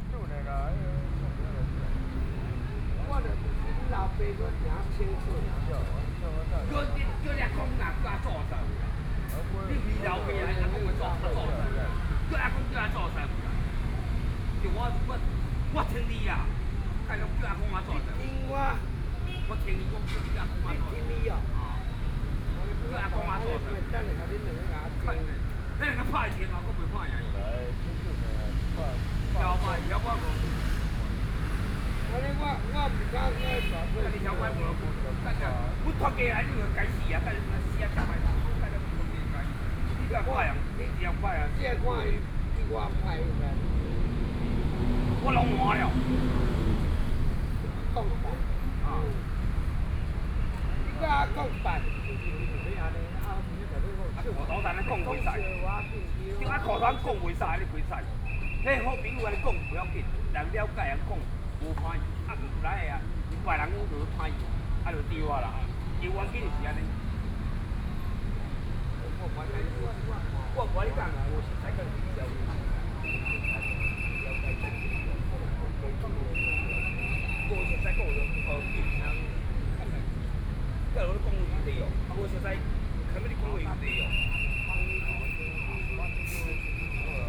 Wenhua Park, Beitou, Taipei City - Drunkard
A group of alcoholics is a dispute quarrel, Traffic Noise, Zoom H4n+ Soundman OKM II
Beitou District, Taipei City, Taiwan